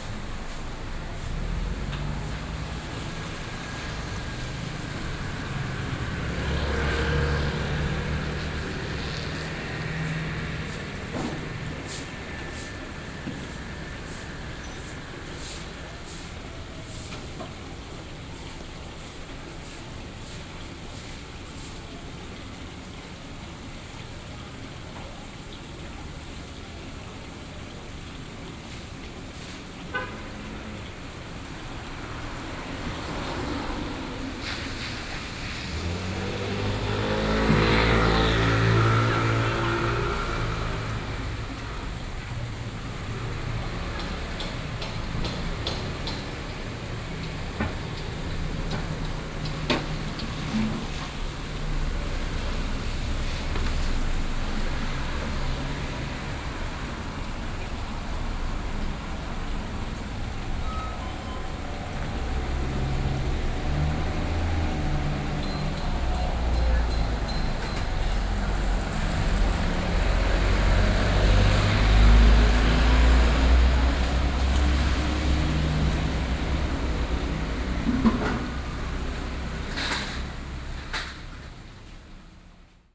Traffic Sound, in a small alley
Sony PCM D50+ Soundman OKM II
Ruifang District, New Taipei City, Taiwan, 5 June 2012, 14:30